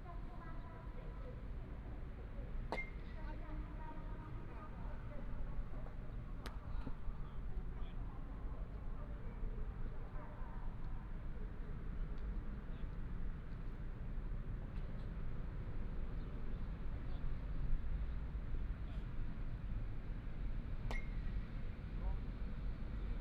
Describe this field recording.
Playing baseball, Binaural recordings, Sony PCM D100+ Soundman OKM II